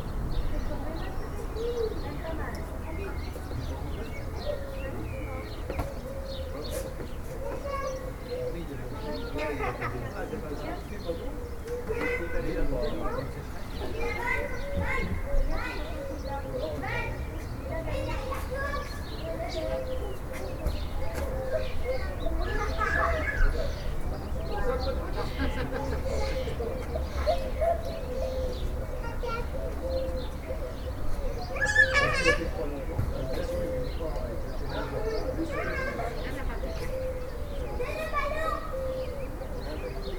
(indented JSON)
{
  "title": "Avenue J Kennedy Boulogne Sur Mer",
  "date": "2010-07-11 16:00:00",
  "description": "sunny Sunday afternoon\nPeople in the gardens.\nChildren are playing",
  "latitude": "50.71",
  "longitude": "1.61",
  "altitude": "19",
  "timezone": "Europe/Berlin"
}